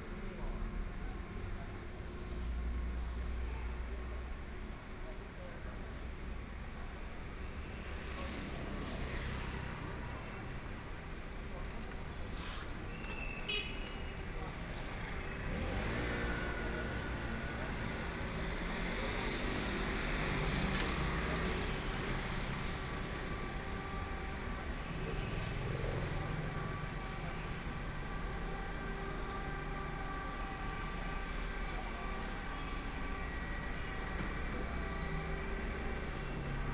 Dianchi Road, Shanghai - in the Street
Walking on the road, Garbage trucks are finishing the sound of garbage, Binaural recording, Zoom H6+ Soundman OKM II
Shanghai, China